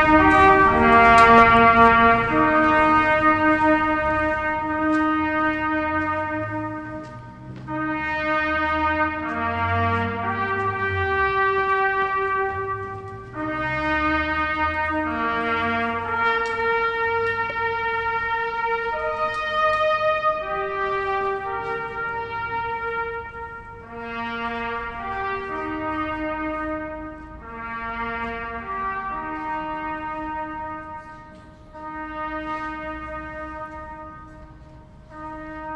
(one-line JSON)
{"title": "Crematorium, Strasnice", "date": "2011-03-28 12:10:00", "description": "Funeral Celebration of Ladislav Novak, famous stopper of Dukla Praha FC who was big star in 50ies and 60ies and in the silver team at the world cap in Chili.", "latitude": "50.08", "longitude": "14.48", "altitude": "242", "timezone": "Europe/Prague"}